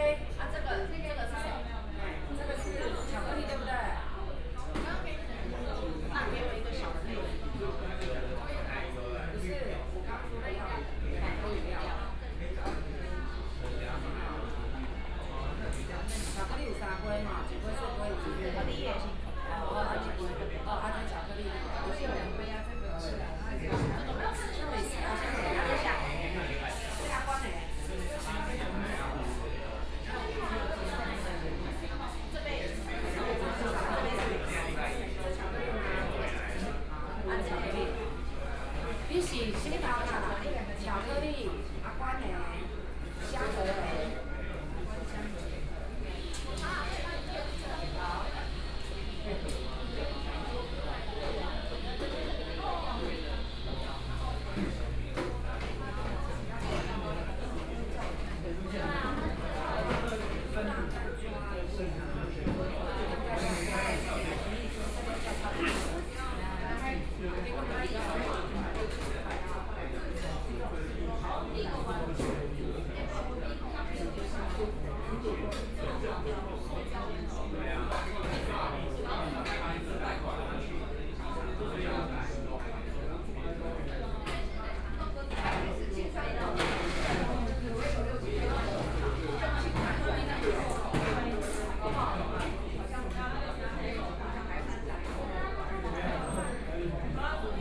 中山區康樂里, Taipei City - Inside the coffee shop
In the coffee shop
Please turn up the volume a little
Binaural recordings, Sony PCM D100 + Soundman OKM II